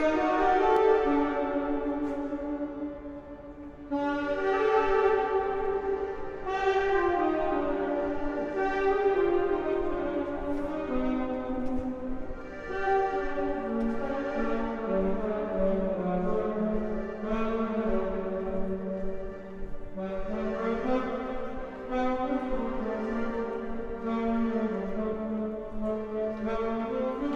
Tiergarten, Berlin, Deutschland - In the Tunnels of the Victory Column in Berlin
Berlin, Deutschland, Europa, Siegessäule, Tunnel, Musiker, Berlin, Germany, Europe, Victory Column, tunnels, musician
Berlin, Germany, 18 July 2014